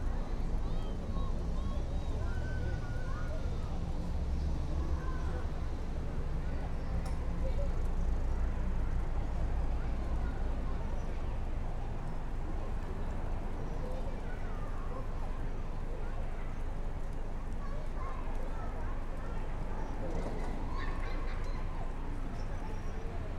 Peachtree Dunwoody Rd, Atlanta, GA, USA - Little Nancy Creek Park
In front of the Little Nancy Creek Park play area. The parking lot is behind the recorder and children are heard playing at the playground. A louder group of children is heard along the park path to the left and in front of the recorder. Adults are heard talking amongst themselves. Leaves blow across the ground in the wind. Minor EQ was used to cut out a little bit of the traffic rumble.
[Tascam Dr-100mkiii & Primo Em272 omni mics]